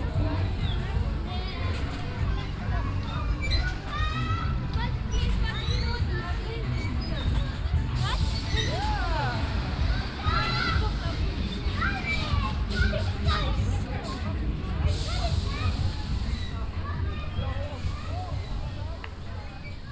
diekirch, kiosque, kermess
Walking across the kermess place. Various music and game sounds. At the end a father and children at the trampolin stand.
international village scapes - topographic field recordings and social ambiences